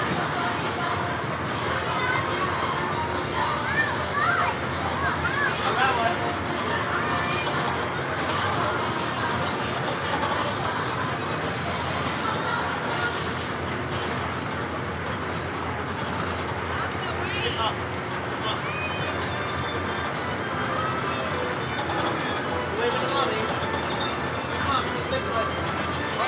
W 40th St, New York, NY, USA - Le Carrousel, Bryant Park
"Le Carrousel in Bryant Park, specially created to complement the park's French classical style, is an homage to both European and American carousel traditions."